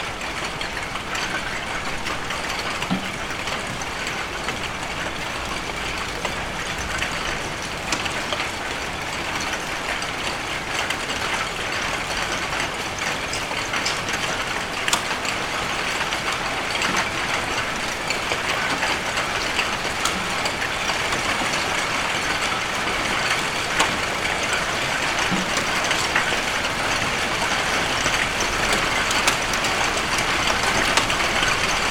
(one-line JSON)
{"title": "Dekerta, Kraków, Poland - (812 XY) Heavy rain with hailstone", "date": "2021-06-24 18:42:00", "description": "Recording of heavy rain with hailstone.\nRecorded with Rode NT4 on Sound Devices MixPre3-II.", "latitude": "50.05", "longitude": "19.96", "altitude": "202", "timezone": "Europe/Warsaw"}